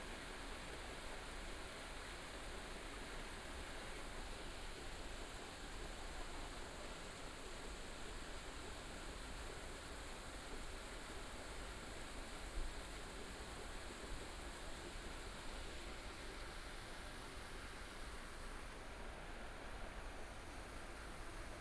大佳里, Taipei city - Aircraft flying through
Traffic Sound, Aircraft flying through, Binaural recordings, ( Keep the volume slightly larger opening )Zoom H4n+ Soundman OKM II